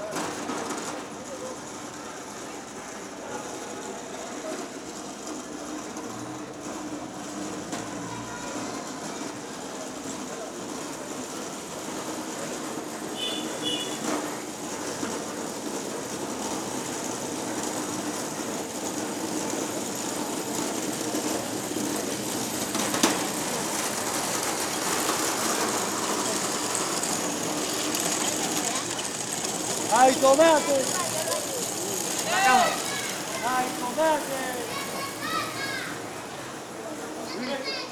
street sounds in the afternoon, street vendors with handcarts, wheels made of ball bearings